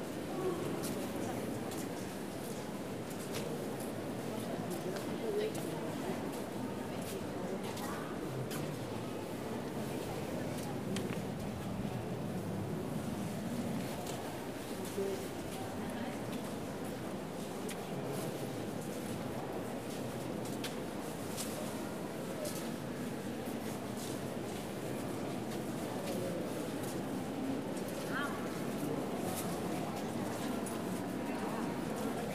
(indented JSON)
{
  "title": "Catedral de Barcelona, Barcelona, Spain - Walking inside Barcelona's gothic cathedral",
  "date": "2014-12-07 17:47:00",
  "description": "Walking around in a crowd of visitors to the cathedral. The most interesting sounds are the footsteps.\nZoom H4n",
  "latitude": "41.38",
  "longitude": "2.18",
  "altitude": "33",
  "timezone": "Europe/Madrid"
}